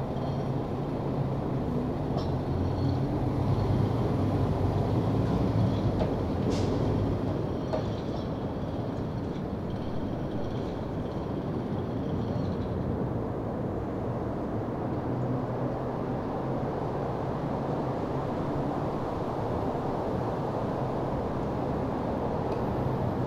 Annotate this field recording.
Recorded (with a Tascam DR-100 mkIII) inside the circle that marks the burial site of Sebastian Bach. I made very small edits mainly to erase wind. This is usually one of the busiest streets in Leipzig and it's now running on minimal levels but still... Because of the COVID-19 pandemic i was expecting it to be really quiet... Listen to it, understand your center, stay calm.